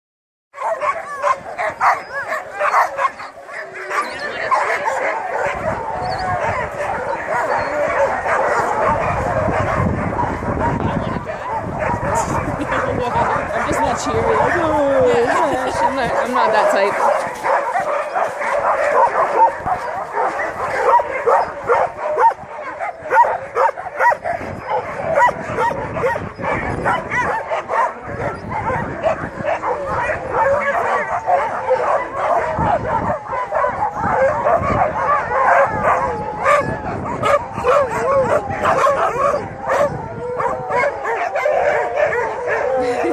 America del Nord - Sledding dogs
YT, Canada, 28 February